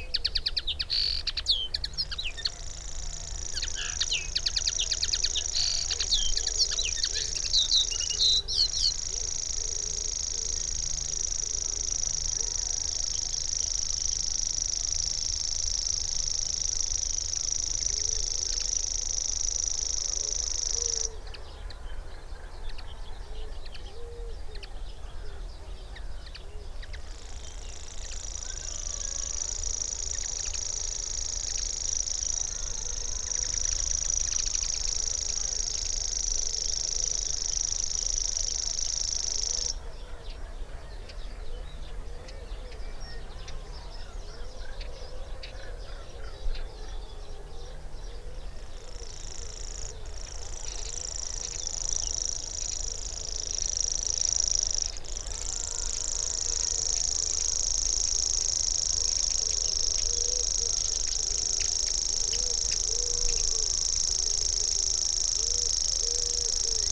Cliff Ln, Bridlington, UK - grasshopper warbler ... in gannet territory ...
grasshopper warbler ... in gannet territory ... mics in a SASS ... bird calls ... songs from ... gannet ... kittiwake ... carrion crow ... curlew ... blackcap ... linnet ... whitethroat ... goldfinch ... tree sparrow ... wood pigeon ... herring gull ... some background noise ...